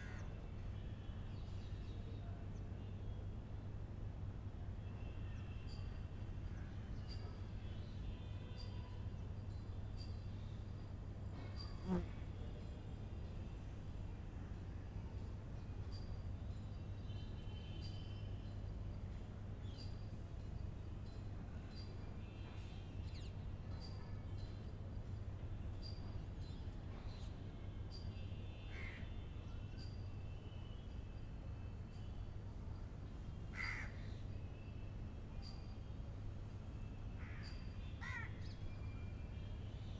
{"title": "Khirki, New Delhi, Delhi, India - General ambience around the old mosque 2", "date": "2008-12-17 14:40:00", "description": "General city ambiance recorded from the flat roof of the very interesting old mosque in Delhi.", "latitude": "28.53", "longitude": "77.22", "altitude": "231", "timezone": "Asia/Kolkata"}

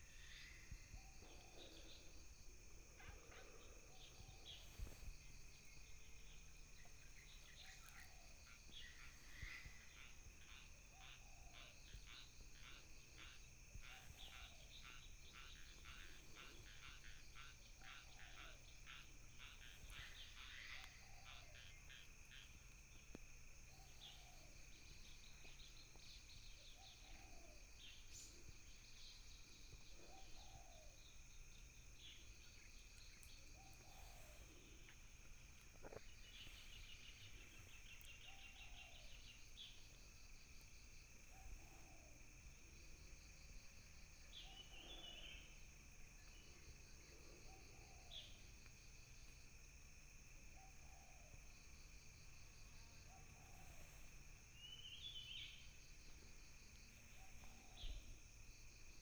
{"title": "種瓜路, 桃米里, Puli Township - In the woods", "date": "2016-04-25 16:49:00", "description": "In the woods, Bird sounds, Frogs chirping", "latitude": "23.96", "longitude": "120.92", "altitude": "643", "timezone": "Asia/Taipei"}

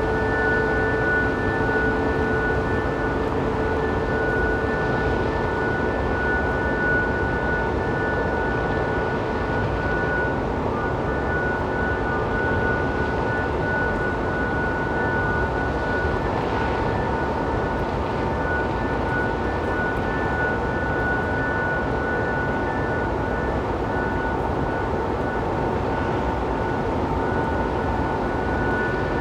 {"title": "Grevenbroich, Germany - Harmonic tones produced by the mine conveyer belts heard from the hilltop", "date": "2012-11-02 13:58:00", "description": "Sometimes the massive conveyor belt systems produce these musical harmonics, but not all the time. How or why is completely unclear. It sounds less harsh from a greater longer distance.", "latitude": "51.07", "longitude": "6.54", "altitude": "84", "timezone": "Europe/Berlin"}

